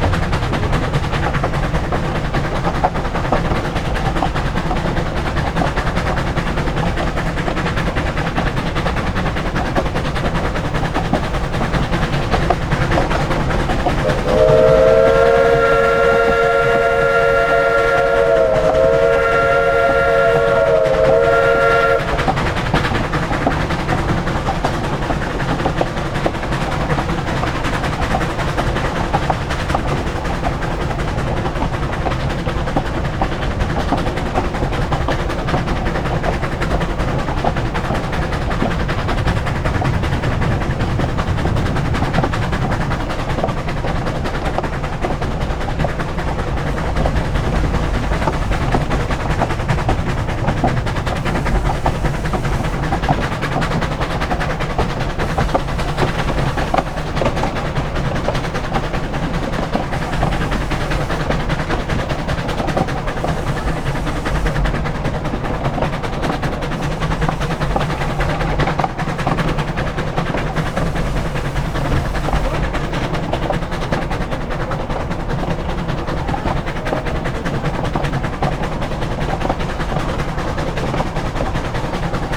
Steam Train Climbs to Torpantau - Brecon Mountain Railway, Merthyr Tydfil, Wales, UK
A ride on the preserved narrow steam train as it climbs up to the lonely station at Torpantau in The Brecon Beacons National Park. Recorded with a Sound Device Mix Pre 3 and 2 Senhheiser MKH 8020s while standing on the front observation platform of the first coach immediately behind the engine.
Cymru / Wales, United Kingdom, 16 July 2019